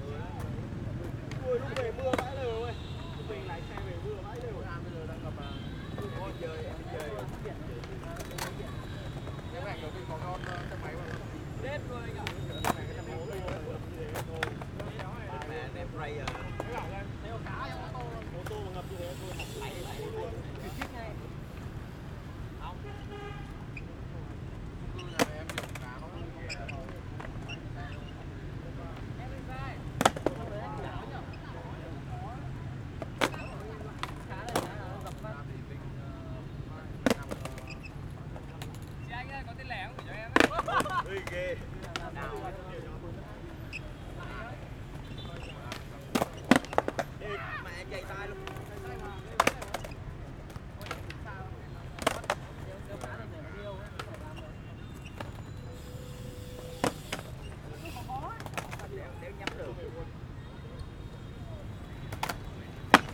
SKATER IN VIETNAM, RECORDING WITH ZOOM H624
France métropolitaine, France, 19 October